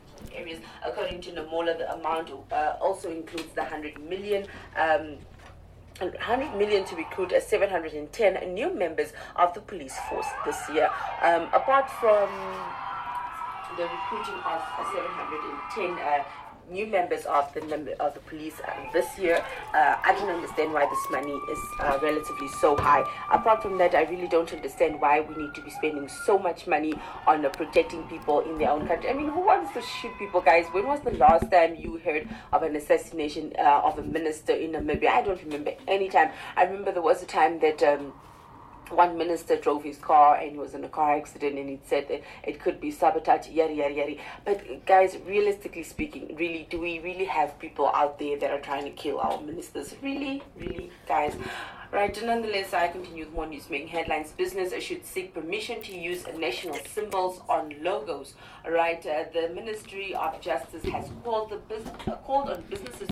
students recording at NUST Radio station studios, ZOOM H2n